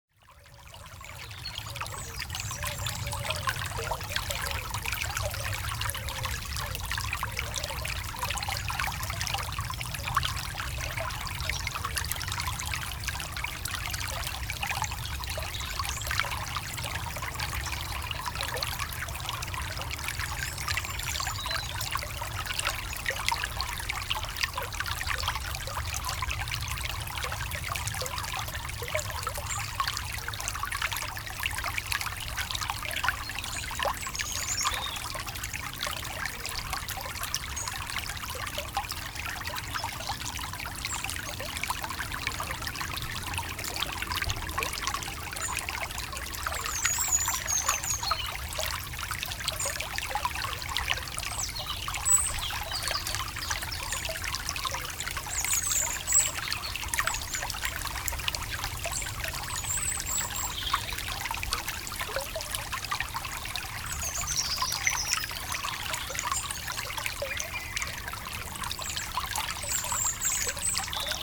Court-St.-Étienne, Belgique - A river
A small river, called "Le Ry d'Hez".